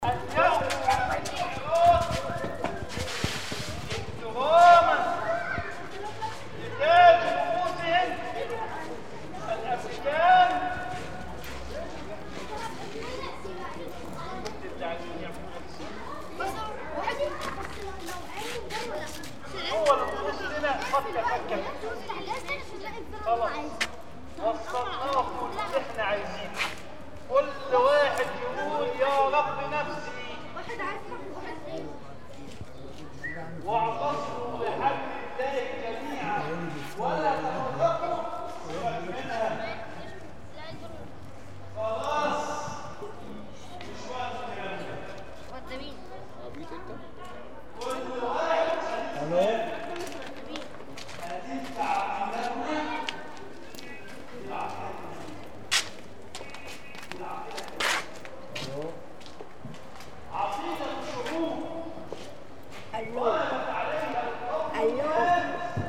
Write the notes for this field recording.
Kom el Deka (Kom el Dik) is a popular area in Alex, hidden in the center part of the city, between its most luxurious streets. The area is famous becouse is populated by black Egyptian, mostly coming from the south of the country.